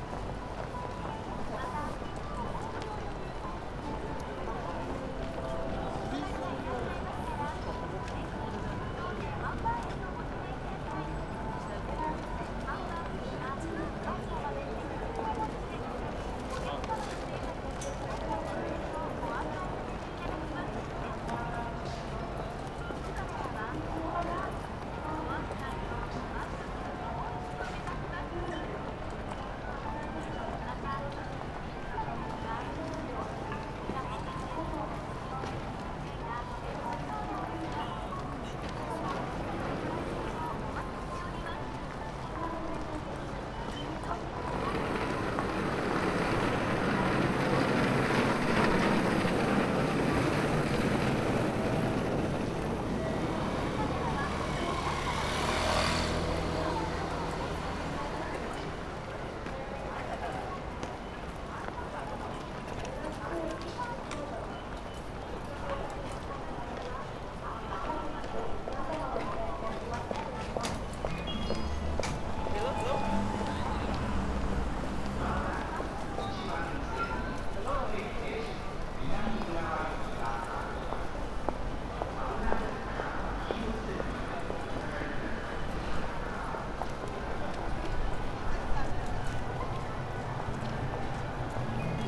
people walking out of the station, omnipresent announcements, trains swishing above
北葛飾郡, 日本 (Japan), March 2013